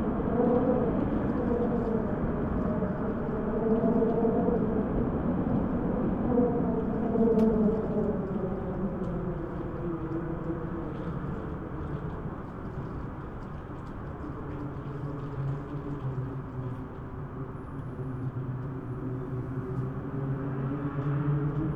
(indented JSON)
{
  "title": "Neos Kosmos, Athens - wind in window",
  "date": "2016-04-08 18:40:00",
  "description": "Interconti hotel, wind blowing through a window\n(Sony PCM D50)",
  "latitude": "37.96",
  "longitude": "23.72",
  "altitude": "68",
  "timezone": "Europe/Athens"
}